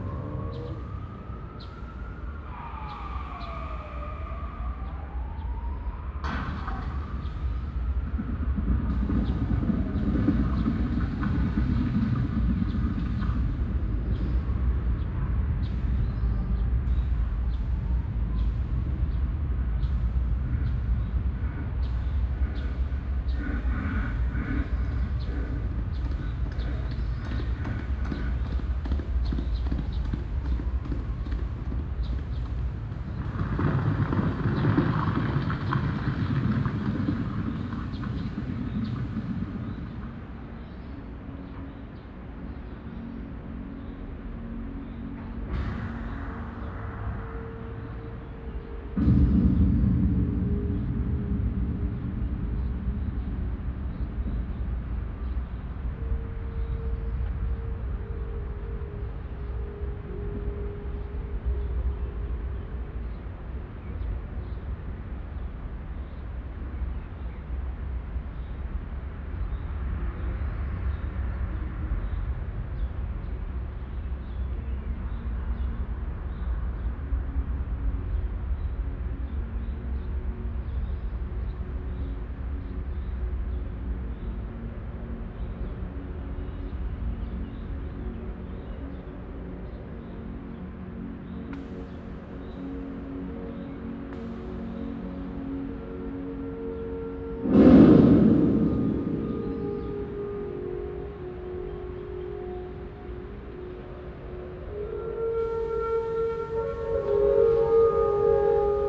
Cetatuia Park, Klausenburg, Rumänien - Cluj, Cetatuia, Fortress Hill, day sound installation
At the monument of Cetatuia. A recording of the multi channel day composition of the temporary sound and light installation project Fortress Hill interfering with the city sound and light wind attacks. headphone listening recommeded.
Soundmap Fortress Hill//: Cetatuia - topographic field recordings, sound art installations and social ambiences
Cluj-Napoca, Romania